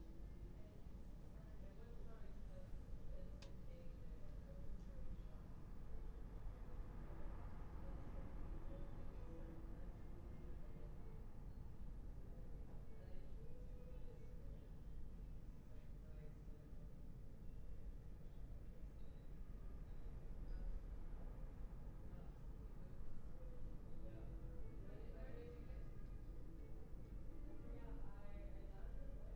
Berlin Bürknerstr., backyard window - Hinterhof / backyard ambience, quiet late summer night, voices, music
22:01 Berlin Bürknerstr., backyard window - Hinterhof / backyard ambience
Berlin, Germany, 6 September 2022, 22:01